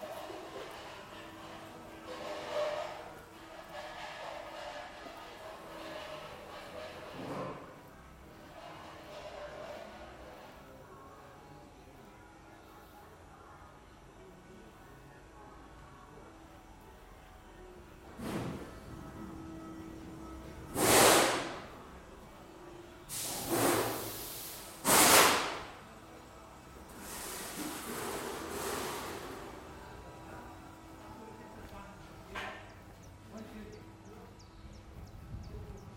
lippstadt, locksmithery/metalworking
recorded june 23rd, 2008.
project: "hasenbrot - a private sound diary"